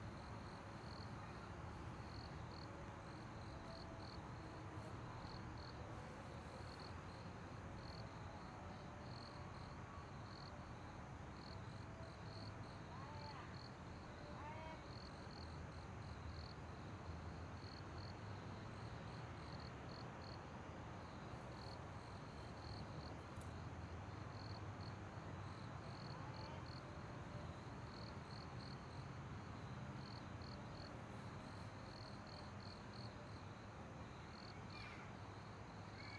Taipei EXPO Park, Taiwan - Dogs barking
Dogs barking, Traffic Sound
Please turn up the volume a little
Zoom H6